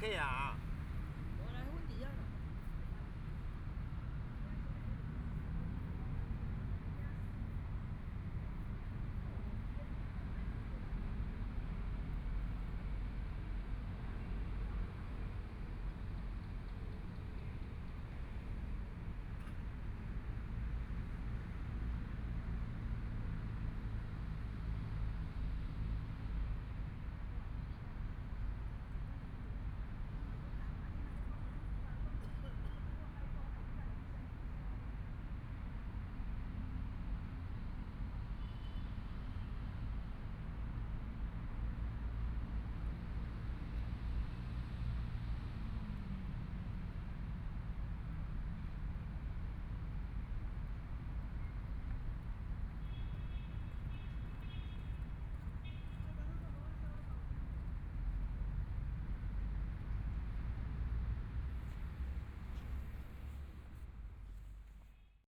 苓雅區仁政里, Kaoshiung City - Late at night in the park
Running and walking people, Traffic Sound
Lingya District, Kaohsiung City, Taiwan